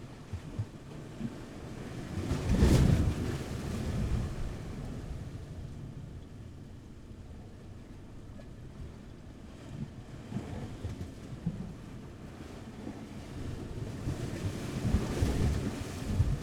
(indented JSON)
{
  "title": "Las Palmas, Gran Canaria, hole between the stones",
  "date": "2017-01-24 12:40:00",
  "latitude": "28.15",
  "longitude": "-15.43",
  "altitude": "4",
  "timezone": "Atlantic/Canary"
}